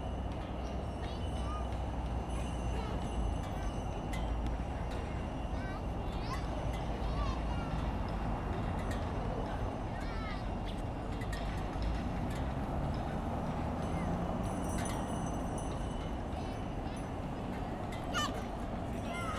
Santo Ildefonso, Portugal - Texturas Sonoras, Avenida dos Aliados
Sounds of the installation "Texturas Sonoras" by Isabel Barbas in Avenida dos Aliados, Porto.
Zoom H4n
Carlo Patrão
Porto, Portugal, 9 December 2014